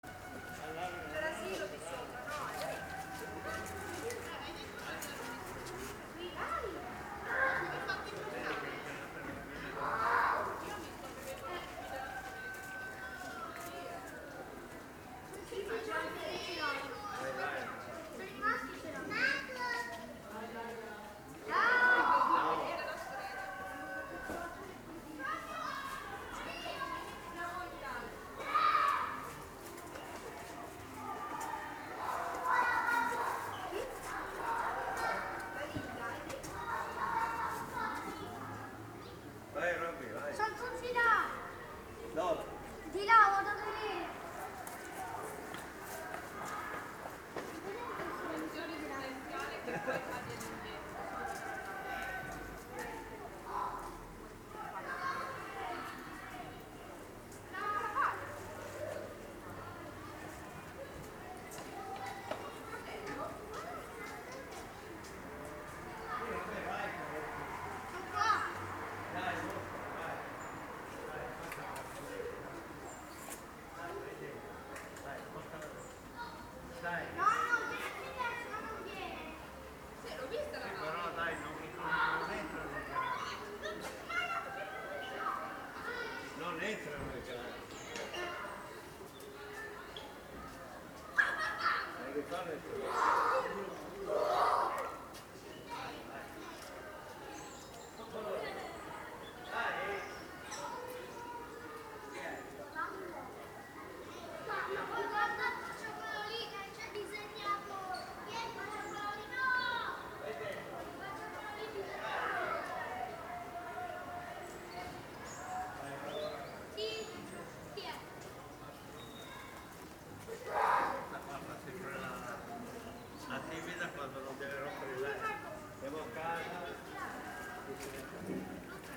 Different perspectives: II D - Torre D'arese, Italy - life in the village - II - perspective D
Kids playing in a nearby house, some other kids arriving, some boys in the park area. In the background sounds from animals (perspective II A) and, more distant, a football training (perspective II C)
Torre D'arese Province of Pavia, Italy